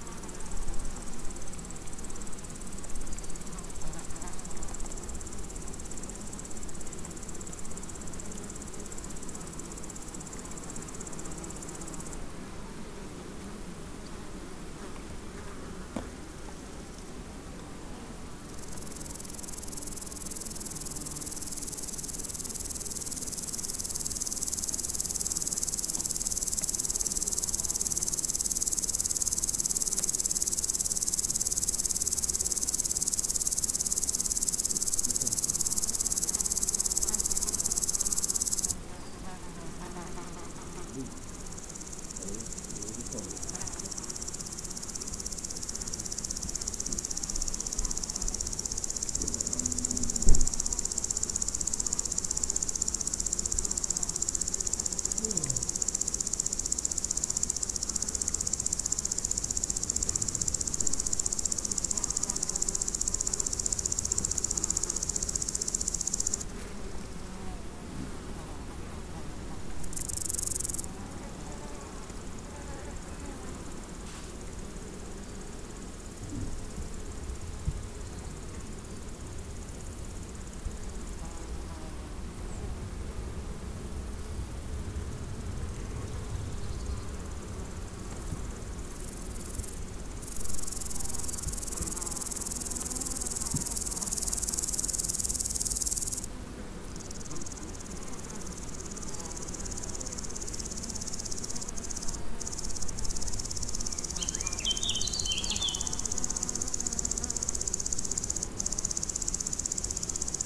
Meadow at średniak, Szczawnica, Poland - (183 BI) Meadow insects
Binaural recording of insects on a meadow, on Średniak Hill.
Recorded with Soundman OKM on Sony PCM D100